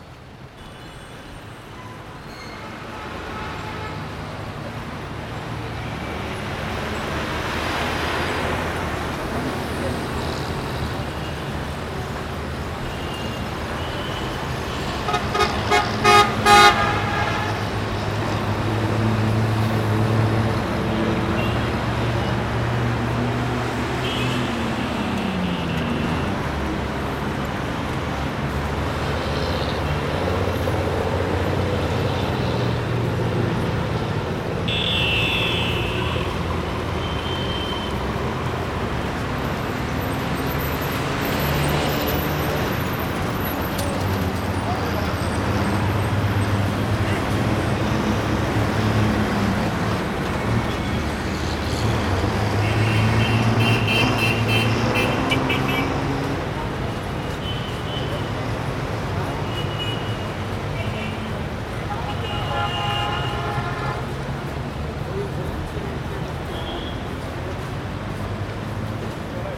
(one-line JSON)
{"title": "New Howrah Bridge Approach Rd, Gulmohar Railway Quarters, Mali Panchghara, Howrah, West Bengal, Inde - Howra Bridge - Ambiance", "date": "2003-02-24 15:00:00", "description": "Howrah Bridge\nAmbiance sur le pont", "latitude": "22.59", "longitude": "88.35", "timezone": "Asia/Kolkata"}